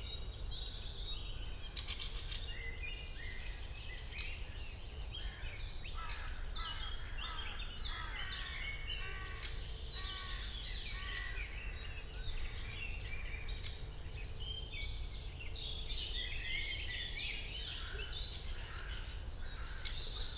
Königsheide, Berlin - spring morning ambience
Königsheide, Berlin, spring morning forest ambience at the pond, distant city sounds
(Sony PCM D50 DPA4060)